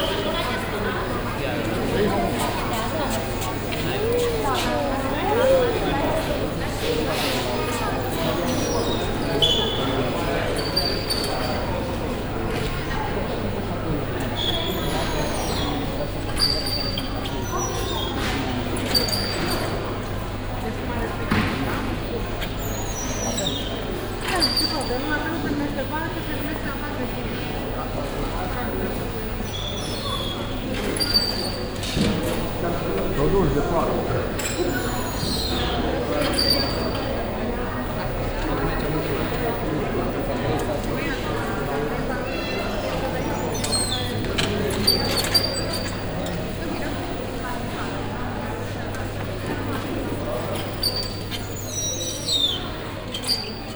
Dâmbul Rotund, Klausenburg, Rumänien - Cluj-Napoca, construction store, swinging entry
Inside as big warehouse for tools, construction and furniture. The sound of a swinging entry wing at the cash till area.
international city scapes - field recordings and social ambiences